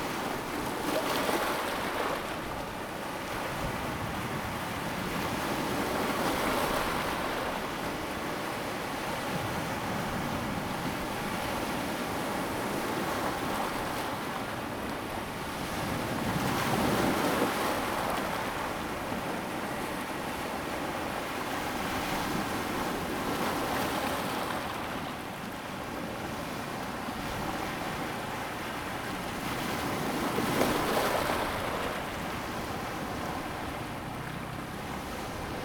尚海灘, Zhubei City, Hsinchu County - beach
in the beach, Sound of the waves, High tide time
Zoom H2n MS+XY
Hsinchu County, Taiwan, 26 August 2017